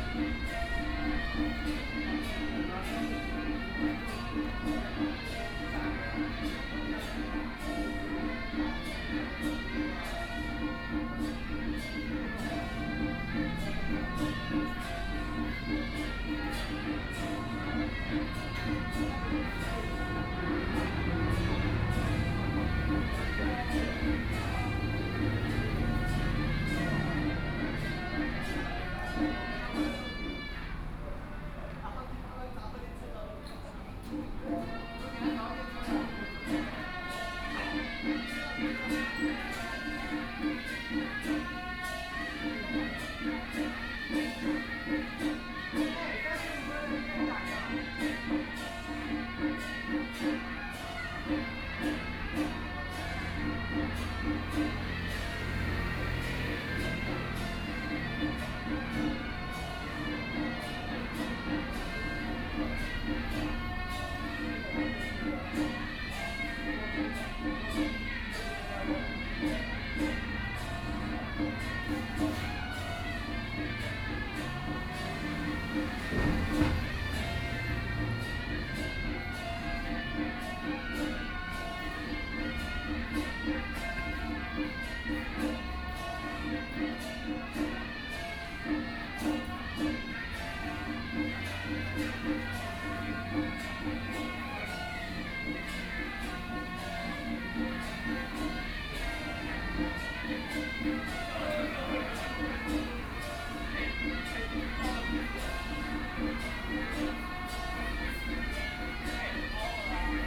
Lingjiao Rd., Su'ao Township - Funeral
Funeral, Hot weather, Traffic Sound, Birdsong sound, Small village
28 July, ~18:00